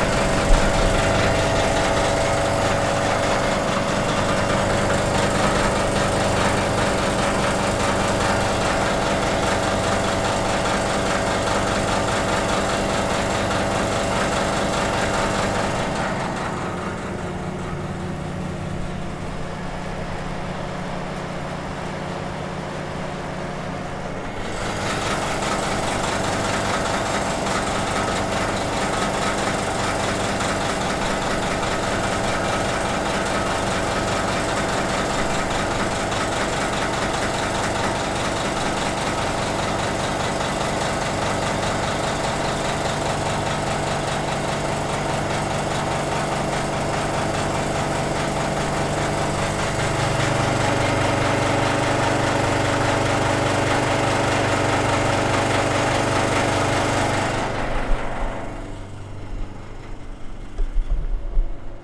still recorded with the sennheiser me-66 and computer